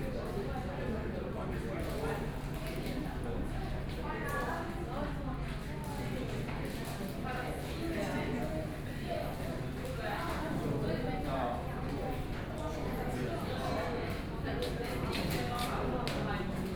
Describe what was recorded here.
Child with mom, In the fast food inside, voice conversation, Sony PCM D50 + Soundman OKM II